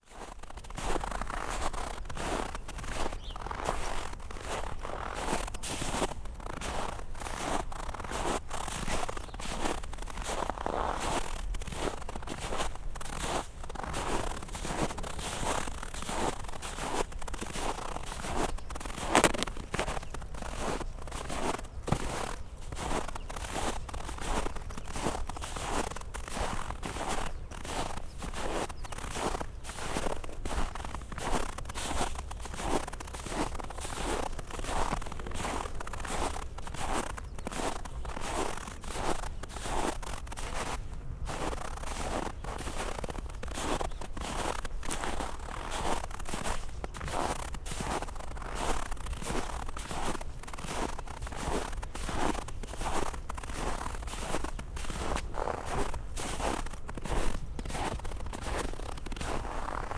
cold saturday afternoon, steps in the frozen snow, cable rubbing on the tripod leg
the motorway will pass through this point
the federal motorway 100 connects now the districts berlin mitte, charlottenburg-wilmersdorf, tempelhof-schöneberg and neukölln. the new section 16 shall link interchange neukölln with treptow and later with friedrichshain (section 17). the widening began in 2013 (originally planned for 2011) and will be finished in 2017.
january 2014